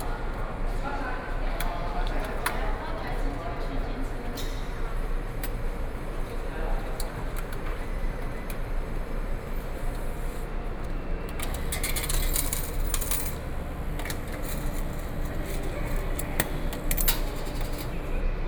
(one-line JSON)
{"title": "Miaoli Station, Taiwan - walk in the Station", "date": "2013-10-08 09:57:00", "description": "in the Station hall, walk into the Platform, Zoom H4n+ Soundman OKM II", "latitude": "24.57", "longitude": "120.82", "altitude": "50", "timezone": "Asia/Taipei"}